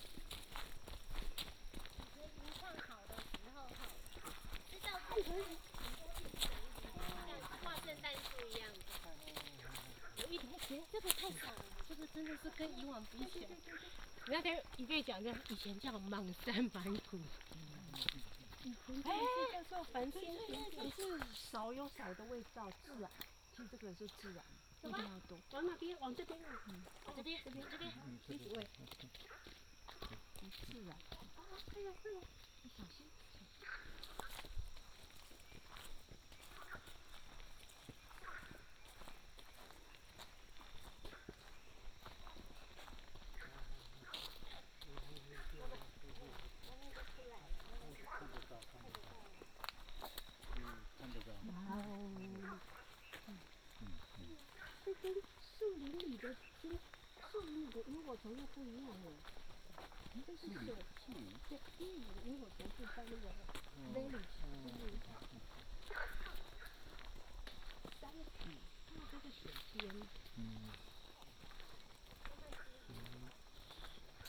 Night walk in the mountain, Frog sounds, Firefly